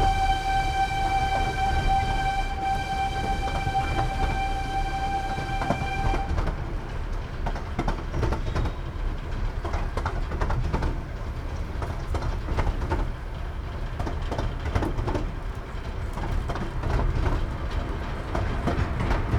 Scarborough - Scarborough North Bay Railway
Train ride from Scalby Mills Station to Peasholm Station ... lavalier mics clipped to baseball cap ...
Scarborough, UK, July 2016